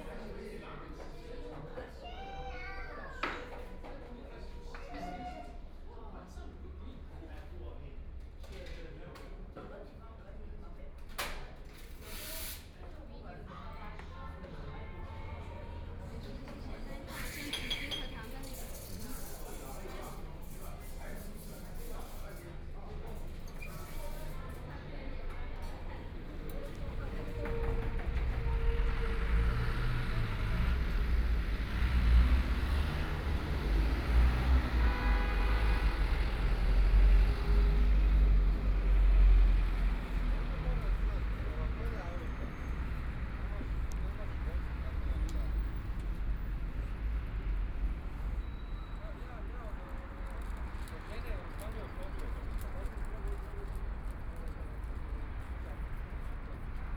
the Bund, Shanghai - the Bund
Walk along the street from the coffee shop inside, Sitting on the street, Traffic Sound, Walking through the streets of many tourists, Bells, Ship's whistle, Binaural recording, Zoom H6+ Soundman OKM II
30 November 2013, 12:31